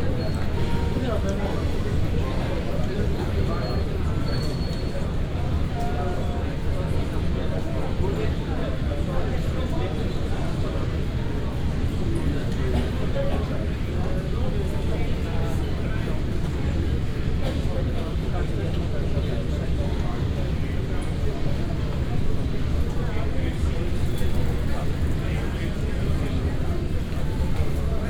{"title": "Athens International Airport - bag pick up hall", "date": "2015-11-05 14:35:00", "description": "(binaural) travelers waiting for their bags and heading towards the exit at the aiport in Athens. (sony d50 +luhd PM-01)", "latitude": "37.94", "longitude": "23.95", "altitude": "91", "timezone": "Europe/Athens"}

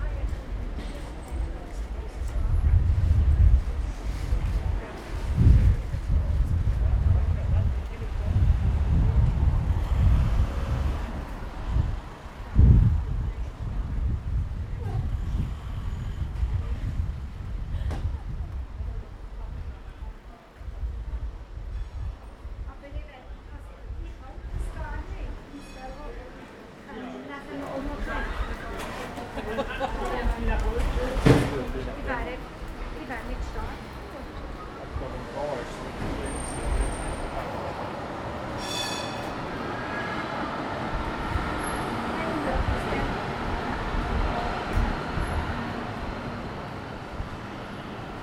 {"title": "Marktplatz Mannheim - Kasimir Malewitsch eight red rectangles", "date": "2017-07-31 20:37:00", "description": "trains, working and eating", "latitude": "49.49", "longitude": "8.47", "altitude": "101", "timezone": "Europe/Berlin"}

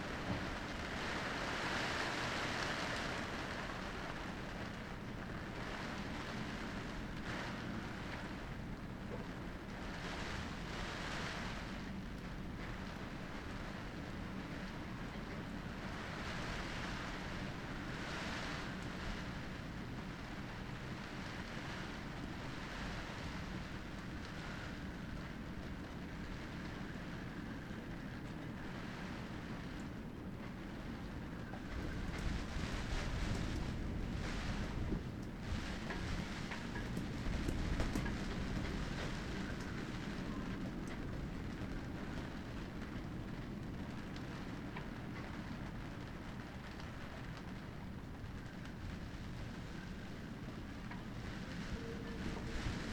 {"title": "workum, het zool: marina, berth h - the city, the country & me: marina, aboard a sailing yacht", "date": "2009-07-18 13:18:00", "description": "rain hits the tarp\nthe city, the country & me: july 18, 2009", "latitude": "52.97", "longitude": "5.42", "altitude": "1", "timezone": "Europe/Berlin"}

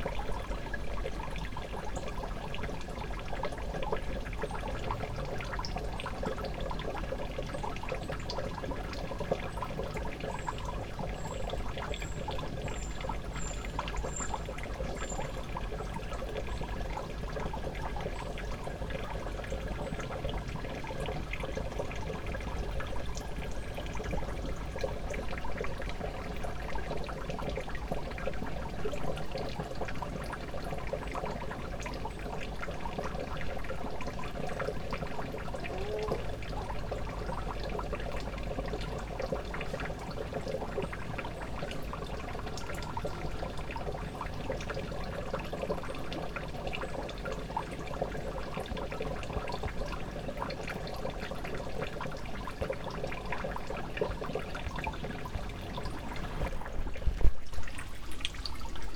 {"title": "studenice, slovenia - at the concreet barrier, water through long haired moss, almost dry", "date": "2015-08-05 15:11:00", "latitude": "46.30", "longitude": "15.62", "timezone": "Europe/Ljubljana"}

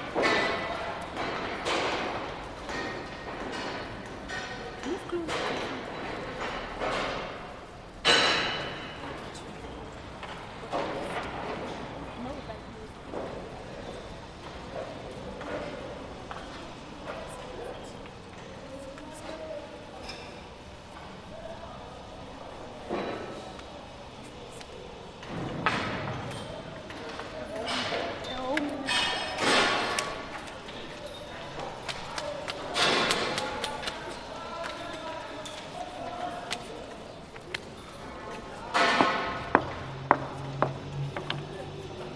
{"title": "Weber Street, Windhoek, Namibia - Construction of new NUST parking garage", "date": "2019-05-27 02:59:00", "latitude": "-22.57", "longitude": "17.08", "altitude": "1667", "timezone": "Africa/Windhoek"}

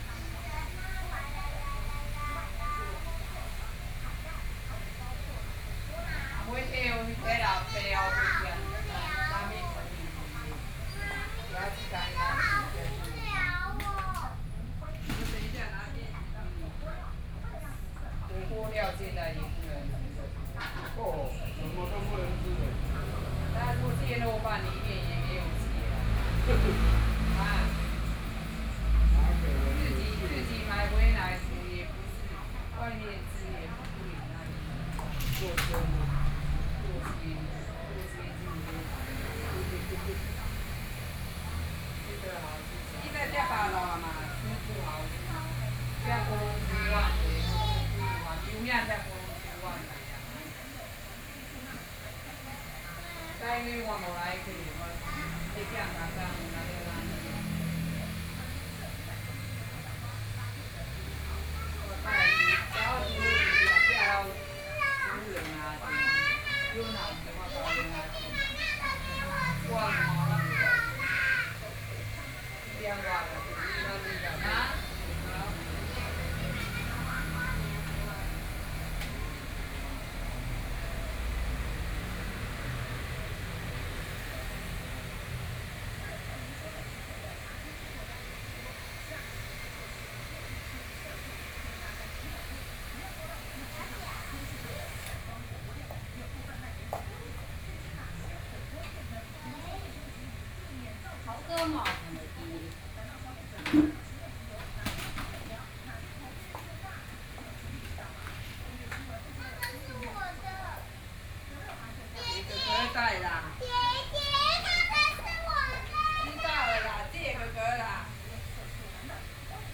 {"title": "Beitou - In the barber shop", "date": "2013-11-13 18:26:00", "description": "In the barber shop, Female hairdresser dialogue between customers, Barber's family from time to time, Binaural recordings, Zoom H6+ Soundman OKM II", "latitude": "25.14", "longitude": "121.50", "altitude": "23", "timezone": "Asia/Taipei"}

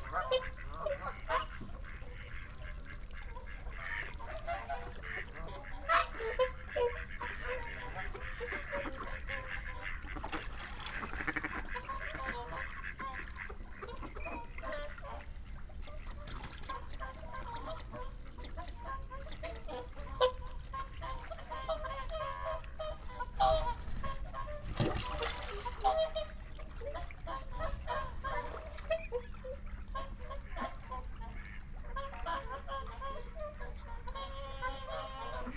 {"title": "Dumfries, UK - whooper swan soundscape ... sass ...", "date": "2022-01-31 16:40:00", "description": "whooper swan soundscape ... scottish water hide ... xlr sass on tripod to zoom h5 ... bird calls from ... teal ... moorhen ... mallard ... barnacle geese ... shoveler ... mallard ... jackdaw ... time edited unattended extended recording ... at 50:00 mins approx ... flock of barnacle geese over fly the hide ... time edited unattended extended recording ...", "latitude": "54.98", "longitude": "-3.48", "altitude": "8", "timezone": "Europe/London"}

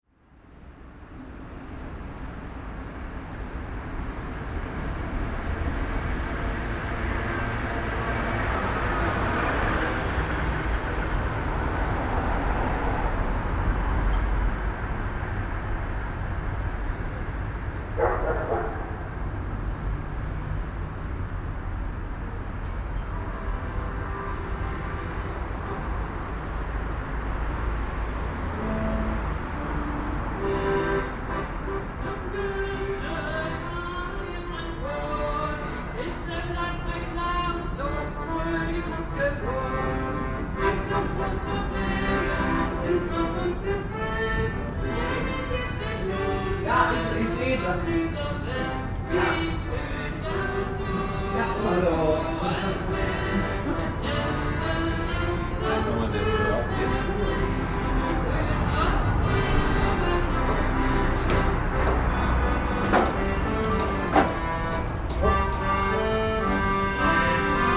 {"title": "Hamm Westen, Germany - Elke Peters Ständchen", "date": "2014-10-06 08:53:00", "description": "… Elke Peters stands on her balcony, plays accordion and sings… the sounds bounce of from the walls between the houses… travelling through the yards… also to the neighbor whose birthday is to be celebrated…", "latitude": "51.67", "longitude": "7.80", "altitude": "65", "timezone": "Europe/Berlin"}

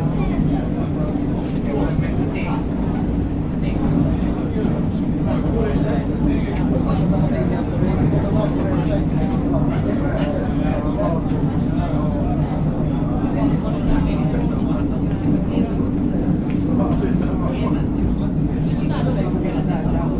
{"title": "somewhere between Chop and Uzhhorod - Transcarpathian elektrichka ride", "date": "2012-12-01 17:45:00", "description": "Taking a regional commuter train from Uzhgorod to Chop", "latitude": "48.47", "longitude": "22.23", "altitude": "99", "timezone": "Europe/Uzhgorod"}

